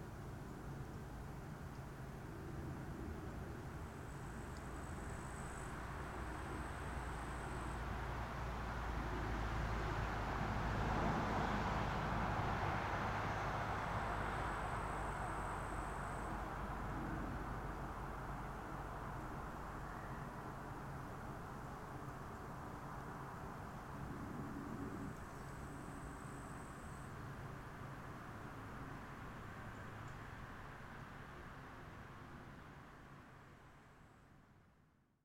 Moletai, Lithuania, soundscape at resort
spa hotel, some traffic...and forest